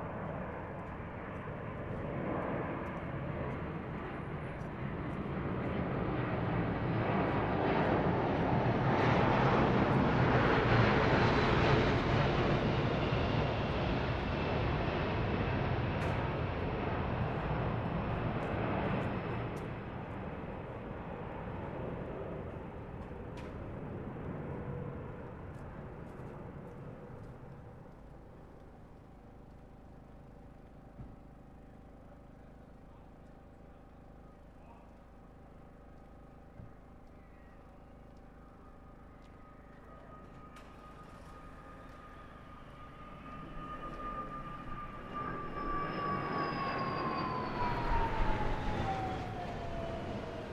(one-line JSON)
{"title": "Tudor Rd, London, UK - Royal Air Force 100th Anniversary Flyover, Tudor Road Hackney, 10.07.18", "date": "2018-07-10", "description": "Recording of Royal Air Force 100th Anniversary Flyover, Tudor Road, Hackney, 10.07.18. Starts off with quieter plane formations, building to very loud.", "latitude": "51.54", "longitude": "-0.05", "altitude": "17", "timezone": "Europe/London"}